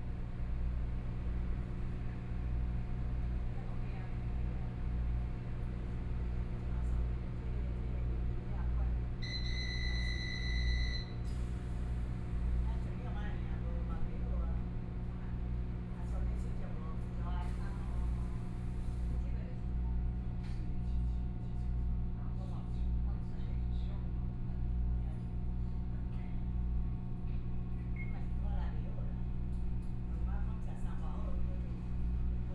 from Daxi Station to Dali Station, Binaural recordings, Zoom H4n+ Soundman OKM II